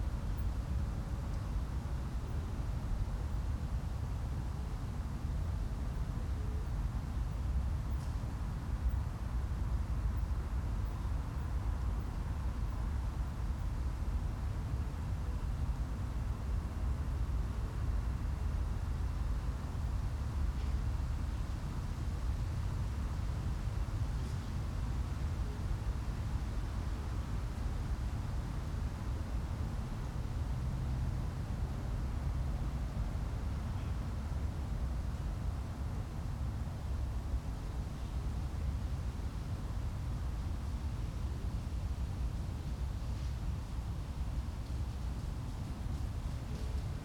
Ackerstraße, Berlin - Cemetary at night. Crickets, passers-by, qiet traffic, wind in the trees, distant tram.
[I used an MD recorder with binaural microphones Soundman OKM II AVPOP A3]
23 September, 22:30